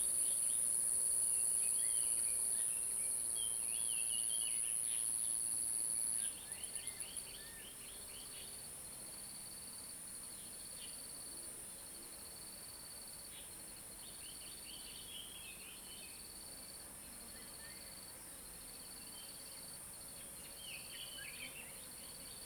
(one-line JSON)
{"title": "頂草楠, 埔里鎮桃米里 - Bird sounds", "date": "2016-06-07 11:38:00", "description": "Bird sounds, Insect sounds\nZoom H2n MS+XY", "latitude": "23.94", "longitude": "120.91", "altitude": "573", "timezone": "Asia/Taipei"}